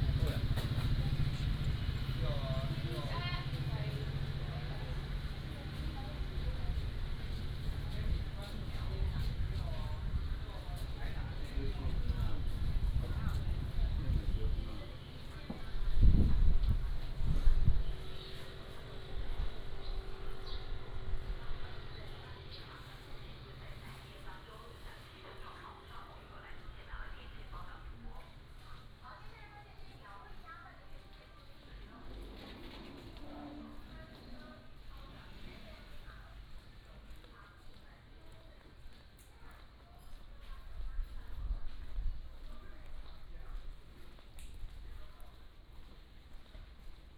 Jinsha Township, Kinmen County - Walking in the alley
Walking in the alley, Traffic Sound, Small towns
福建省, Mainland - Taiwan Border, 3 November